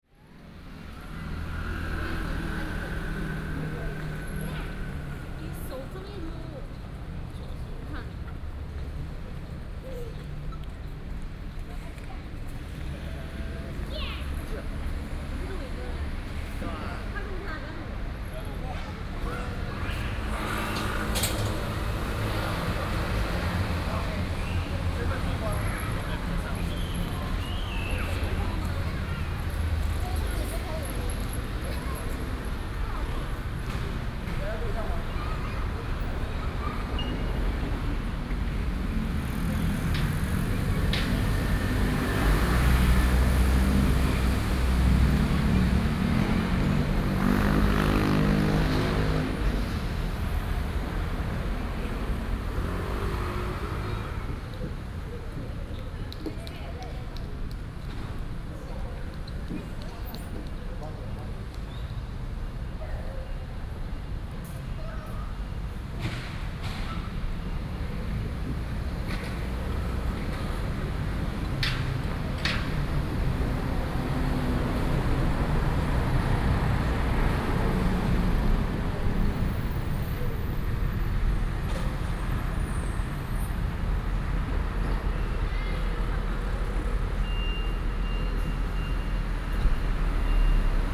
Minquan St., Ruifang Dist., New Taipei City - Small park
In a small park plaza
Sony PCM D50+ Soundman OKM II
Ruifang District, New Taipei City, Taiwan, 5 June